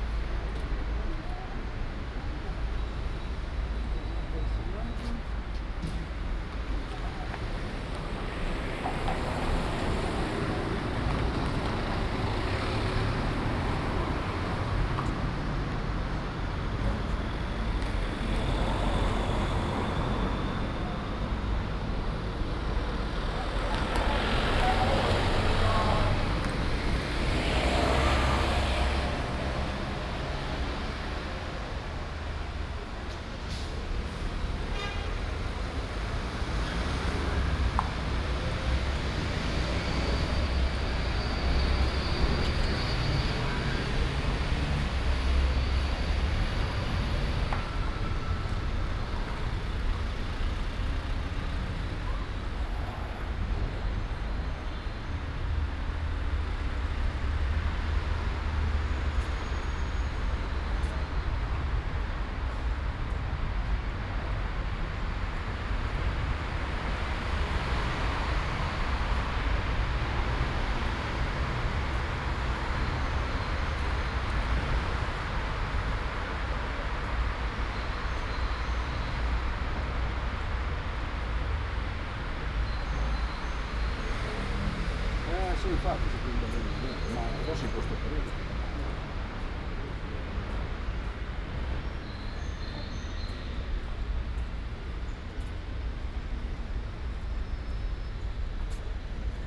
Ascolto il tuo cuore, città. I listen to your heart, city. - “Monday May 18th walk at noon in the time of covid19” Soundwalk
“Monday May 18th walk at noon in the time of covid19” Soundwalk
Chapter LXXX of Ascolto il tuo cuore, città. I listen to your heart, city.
Monday May 18th 2020. Walk all around San Salvario district, Turin, sixty nine days after (but day fifteen of Phase II and day I of Phase IIB) of emergency disposition due to the epidemic of COVID19.
Start at 11:50 a.m., end at h. 00:36 p.m. duration of recording 45’47”
The entire path is associated with a synchronized GPS track recorded in the (kml, gpx, kmz) files downloadable here: